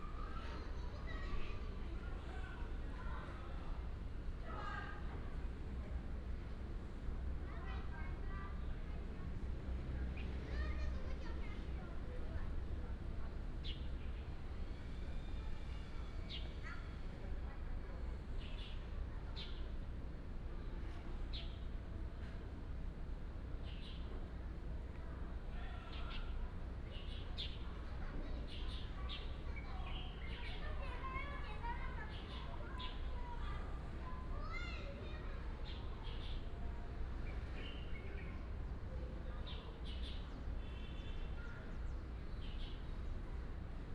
大庄國小, Xiangshan Dist., Hsinchu City - Next to the Primary School
Next to Primary School, The sound of birds, Binaural recordings, Sony PCM D100+ Soundman OKM II